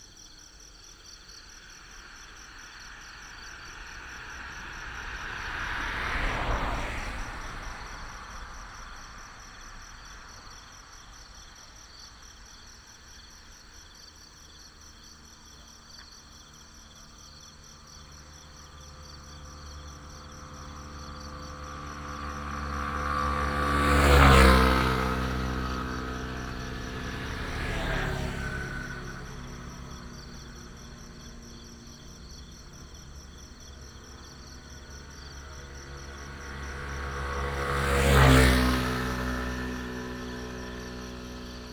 新龍路, Xinpu Township - Insects sound
Next to the farm, Traffic sound, Insects, Binaural recordings, Sony PCM D100+ Soundman OKM II
Hsinchu County, Taiwan, 19 September 2017